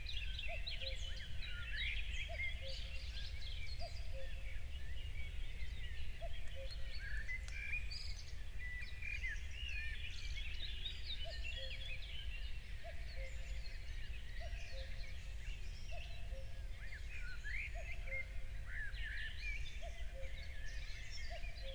Berlin, Buch, Mittelbruch / Torfstich - wetland, nature reserve
04:00 Berlin, Buch, Mittelbruch / Torfstich 1